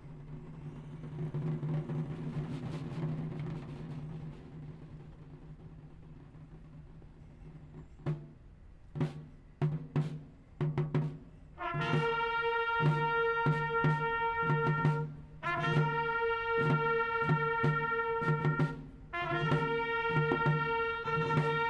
Fourneyron, Saint-Étienne, France - St-Etienne - 11/11/2017
St-Etienne - Loire
Place Fourneyron
Cérémonie du 11 novembre 2017
France métropolitaine, France, 11 November